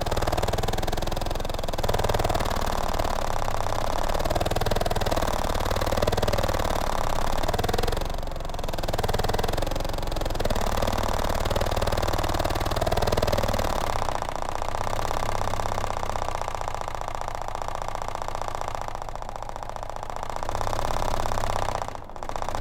Hálsasveitarvegur, Iceland - Cold wind through garage door
Cold wind through garage door.
5 June 2019